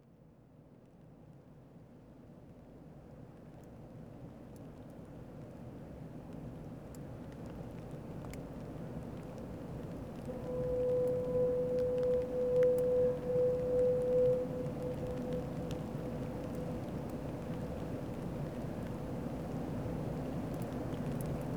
Trying to sleep in practically the same building where the foghorns are situated is rather stressful; I much preferred listening to the foghorns lonely tones from this distance, even if I did get a little wet.